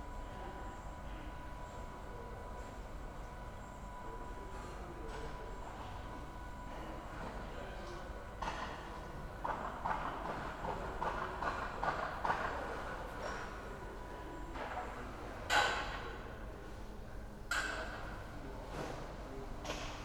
scaffolders and renovation works in my backyard. this neighbourhood Kiez is developing, so these sounds can be heard all over.
(Sony PCM D50, internal mics)